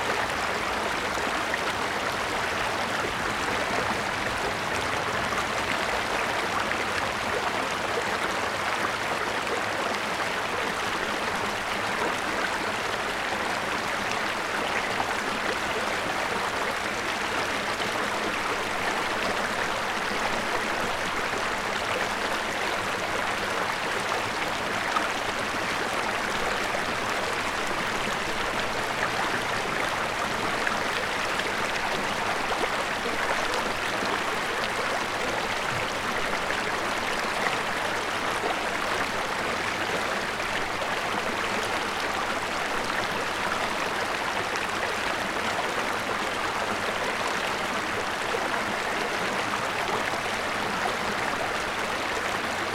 Chem. des Marmillons, Aix-les-Bains, France - Glousglous
Sur une pierre dans le lit du Sierroz au plus bas.
France métropolitaine, France, 31 July